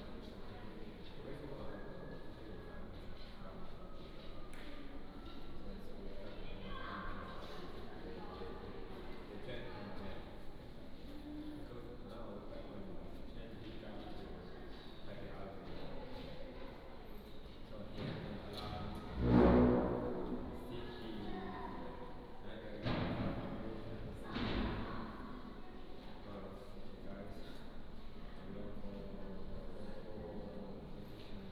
김해 문화의 전당 윤슬미술관, Gyeongsangnam-do - In the museum inside
In the museum inside